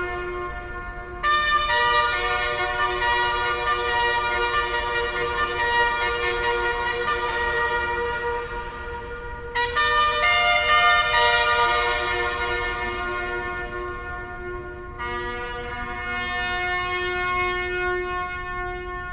Jacob Lines, Karachi, Pakistan - Military salute at the tomb of Muhammad Ali Jinnah
Recording of the daily military salute at the Muhammad Ali Jinnah tomb, otherwise known as Mazar-e-Quaid. Muhammad Ali Jinnah was the founder of Pakistan.